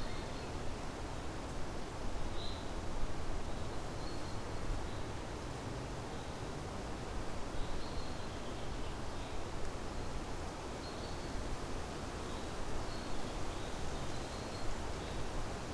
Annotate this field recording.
just a moment on a bench, at the forest edge, a familiar place from times long ago, the old oak tree which was hit by a lightning stroke, listening to the wind and watching the horizon. (Sony PCM D50)